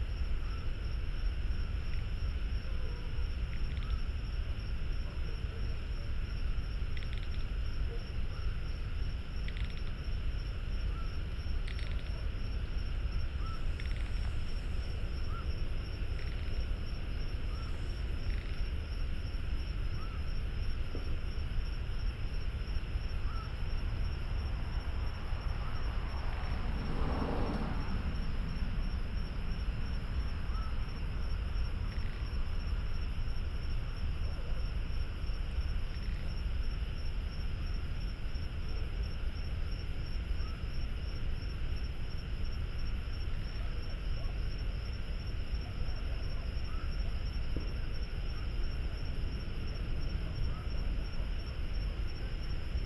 北投區關渡里, Taipei City - Environmental sounds
Traffic Sound, Environmental sounds, Birdsong, Frogs
Binaural recordings
Beitou District, 關渡防潮堤, 17 March 2014